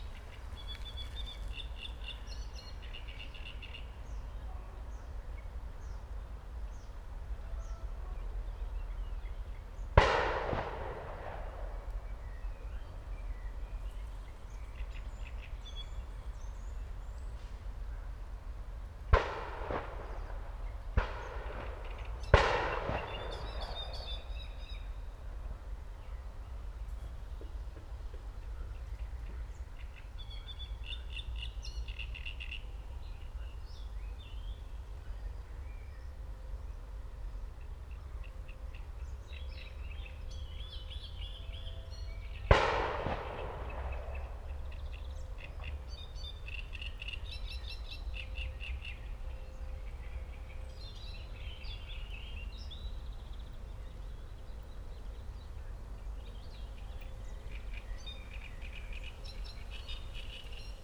Leisure park and nature reserve, Great reed warbler and shots from the nearby shooting range, distant churchbells
(Sony PCM D50, DPA4060)
Siemianowice Śląskie, Poland, May 2019